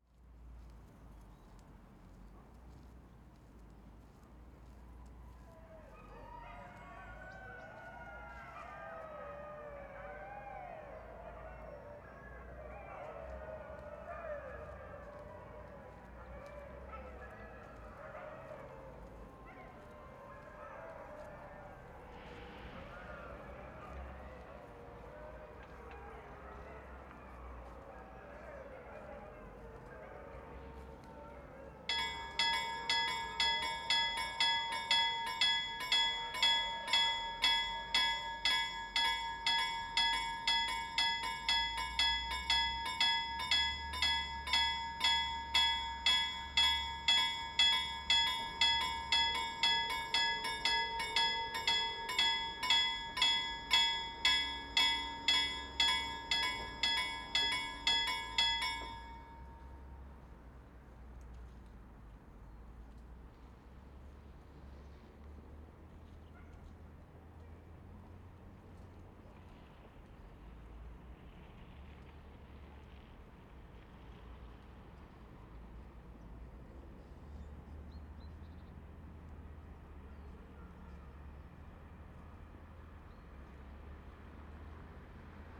Stallarna, Gällivare, Suède - Ambiance ville Laponie suédoise Nord GALLIVÄRE
P@ysage Sonore NORD SWEDEN, LAPLAND. Meute de chiens puis passage a niveau tinte se baisse et passage train de marchandise!